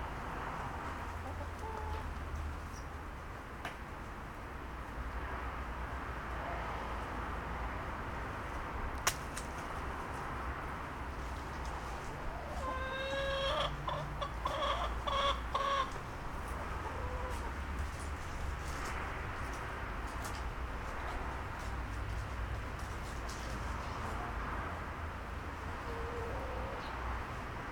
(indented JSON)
{
  "title": "Les poules de la cave40",
  "date": "2010-09-16 16:31:00",
  "description": "Le repas des poules à la cave40\nBourges\nMais où est Camille ?",
  "latitude": "47.08",
  "longitude": "2.39",
  "timezone": "Europe/Paris"
}